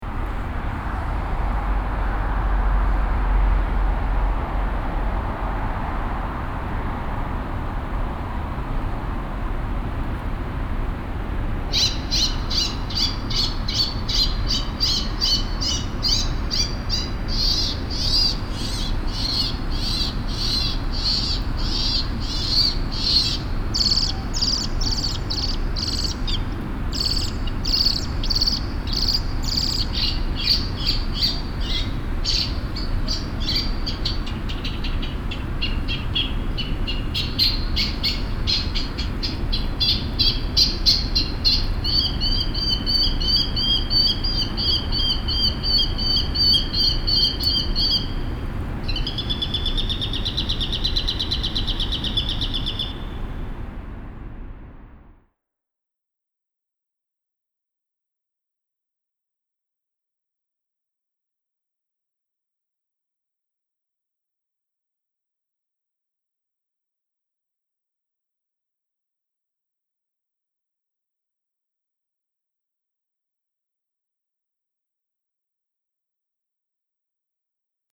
Inside a vineyard on a steep mountain. The sound of an electronic protection system that randomly plays alarming sounds for birds to protect the vine grapes. In the background the sound of the street traffic from the main road nearby.
soundmap d - topographic field recordings and socail ambiencs